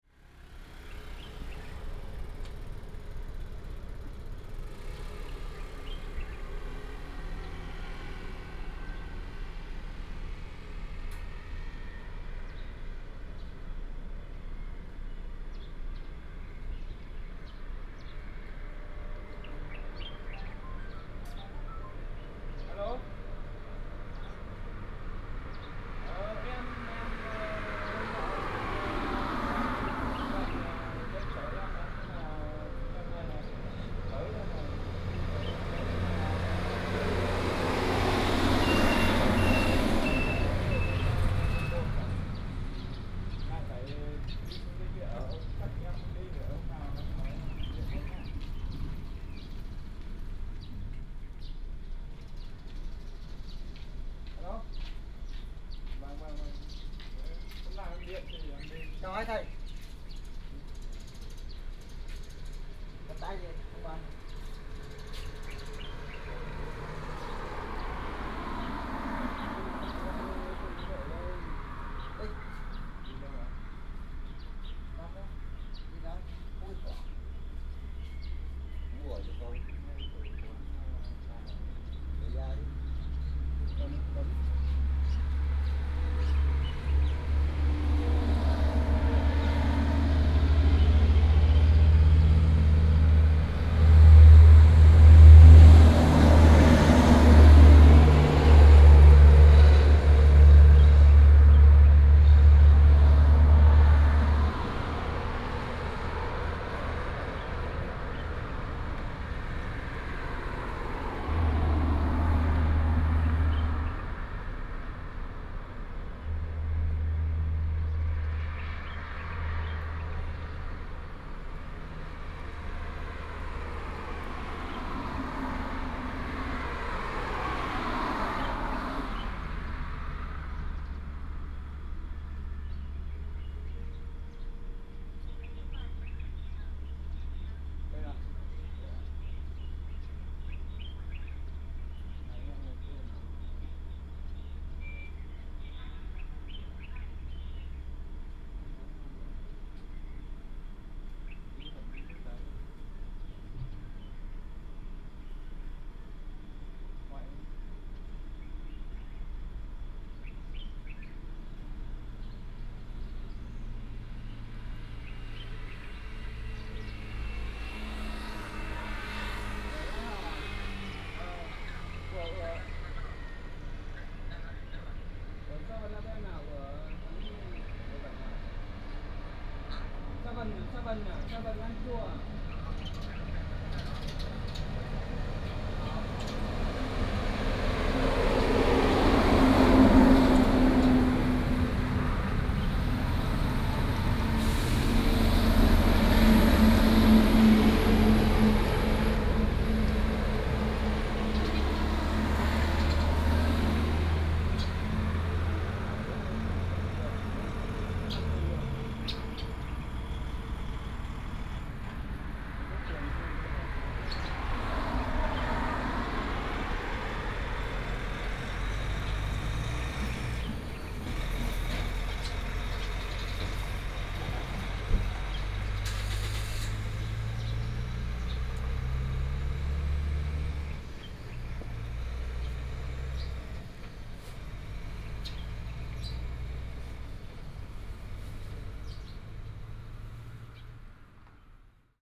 安朔門市, Senyong, Daren Township - outside the convenience store
Night outside the convenience store, Birds sound, Traffic sound
Taitung County, Taiwan, 28 March 2018, 07:39